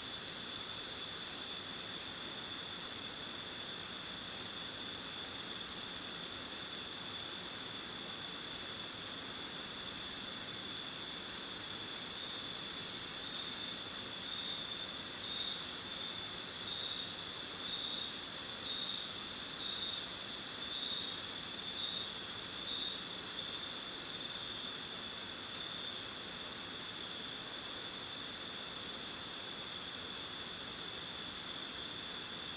Iracambi - setled night
recorded at Iracambi, a NGO dedicated to protect and grow the Atlantic Forest